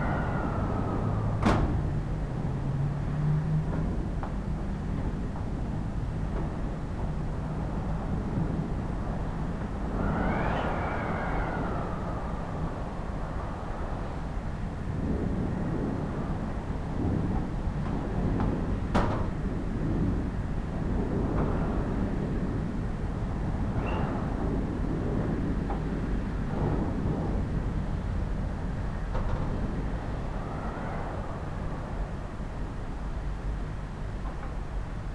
Lihuania, Utena, under the roof
inside local cultural centre, windy day and sounds under the roof